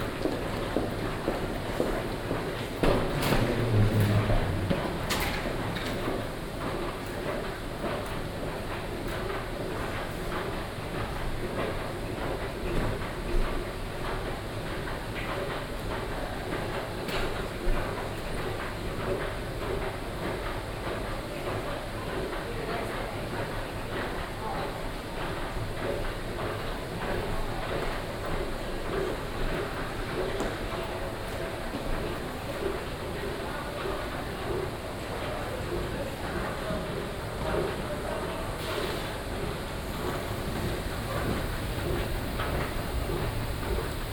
London Gatwick Airport (LGW), West Sussex, UK - airport walk
London Gatwick airport, walk to the shuttle station.
(Sony PCM D50, OKM2 binaural)
United Kingdom, European Union, 5 June 2013